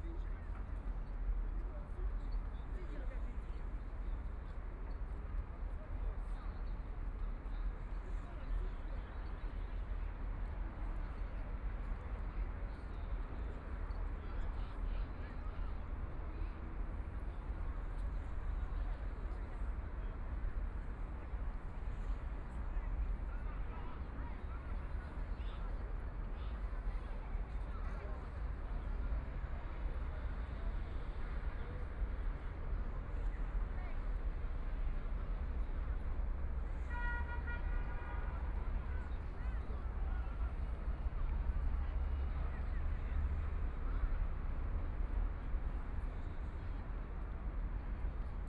The the Bund History Museum, Huangpu District - In the square
Many tourists in the square, Traffic Sound, Street with moving pedestrians, Ships traveling through, Binaural recording, Zoom H6+ Soundman OKM II
Huangpu, Shanghai, China, 25 November 2013, 13:50